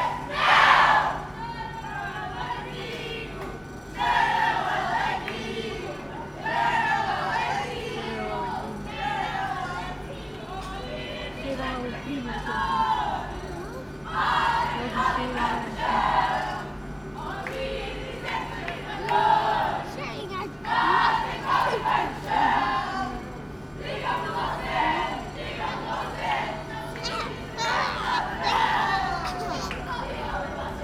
Coimbra-B, Coimbra, Portugal - Coimbra B train station
trains, kids singing and playing games on the platform, train announcements, people talking
Baixo Mondego, Centro, Portugal, 7 August, ~19:00